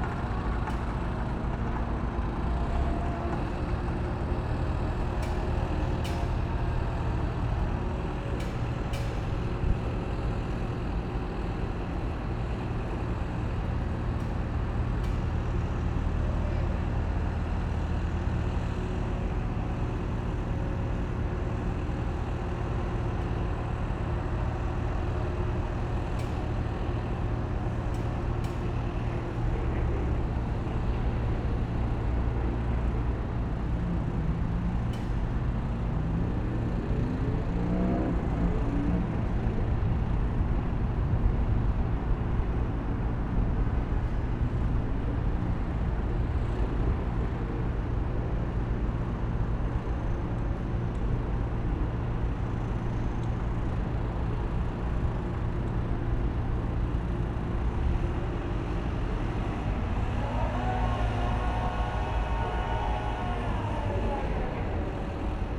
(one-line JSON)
{"title": "berlin: grenzallee - A100 - bauabschnitt 16 / federal motorway 100 - construction section 16: crane lifts steal beam", "date": "2016-04-16 17:16:00", "description": "big crane lifts big steal beam\napril 16, 2016", "latitude": "52.47", "longitude": "13.46", "altitude": "37", "timezone": "Europe/Berlin"}